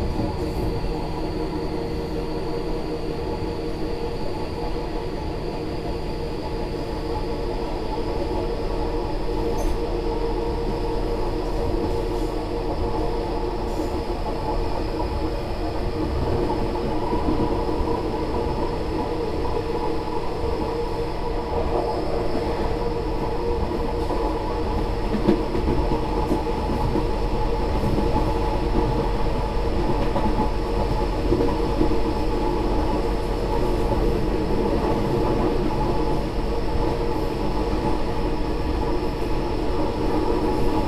Tambon Thong Chai, Amphoe Bang Saphan, Chang Wat Prachuap Khiri Khan, Thailand - Toilette im Zug nach Surathani
Rattling and resonances of the toilette pipe in the train from Bangkok to Surathani, with a few occasional horn blowings.